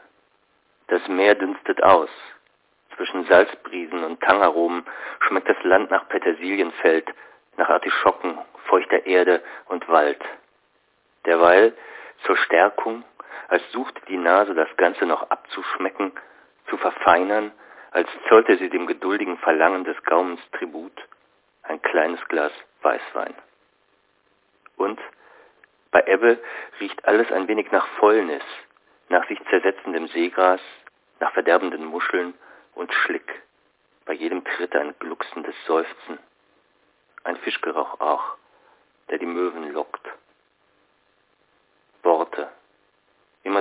himmel/worte/land (2) - himmel worte land (2) - hsch ::: 08.05.2007 12:50:04